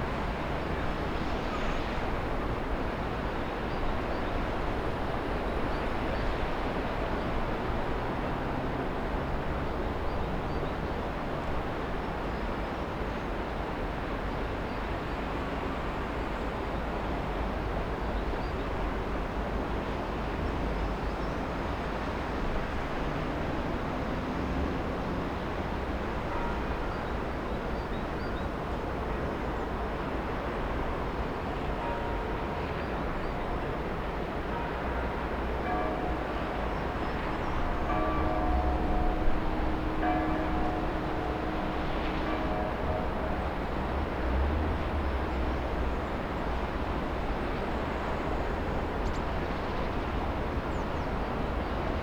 Olsztyn, Polska - West train station (3)
Beyond station border. Closer to old train bridge. City atmosphere in rush hour. Microphone headed to old city.